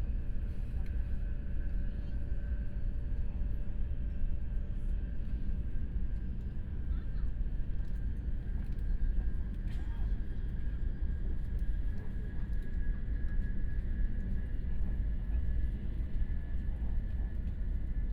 {"title": "Yangmei City, Taoyuan County - Taiwan High Speed Rail", "date": "2014-01-30 19:15:00", "description": "Taiwan High Speed Rail, from Taoyuan Station to Hsinchu Station, Binaural recordings, Zoom H4n+ Soundman OKM II", "latitude": "24.90", "longitude": "121.08", "timezone": "Asia/Taipei"}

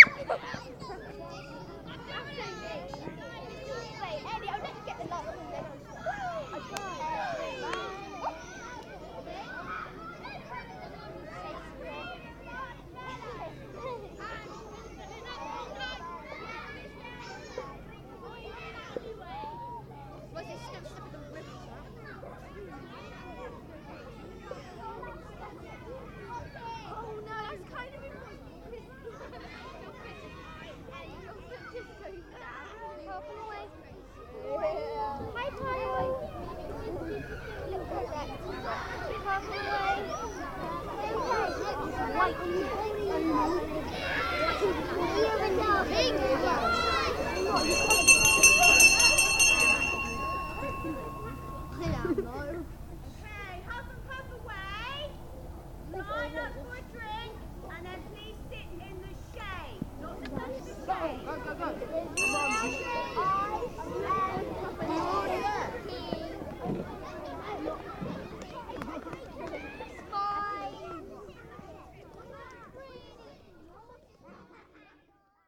{"title": "Symondsbury, UK - School's Out", "date": "2016-07-18 13:15:00", "description": "World Listening Day - Sounds Lost and Found - the timeless sound of children at playtime and the ringing of the school bell to call them in again.", "latitude": "50.74", "longitude": "-2.79", "altitude": "26", "timezone": "Europe/London"}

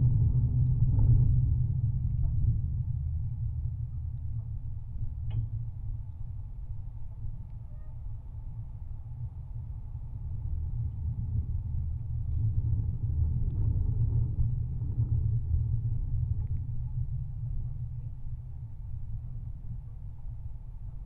{"title": "Juknenai, Lithuania, lightning rod", "date": "2021-03-05 11:20:00", "description": "Low rumble of lightning rod on building. Contact microphones recording.", "latitude": "55.54", "longitude": "25.90", "altitude": "182", "timezone": "Europe/Vilnius"}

